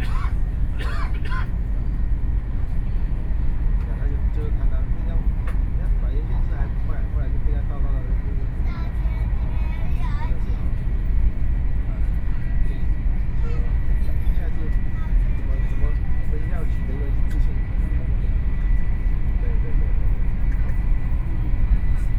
Taiwan High Speed Rail - Train speed up
Train speed up, Sony PCM D50 + Soundman OKM II